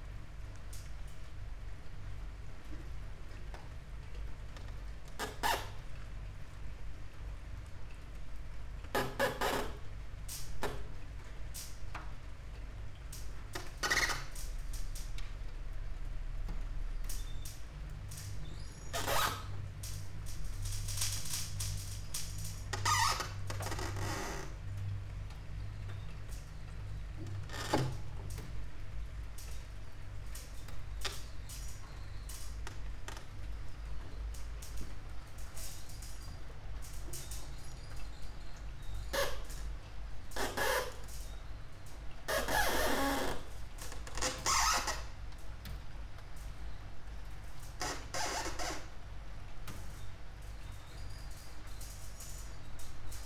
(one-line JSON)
{"title": "yard window - winter night, rain", "date": "2013-12-27 00:10:00", "latitude": "46.56", "longitude": "15.65", "altitude": "285", "timezone": "Europe/Ljubljana"}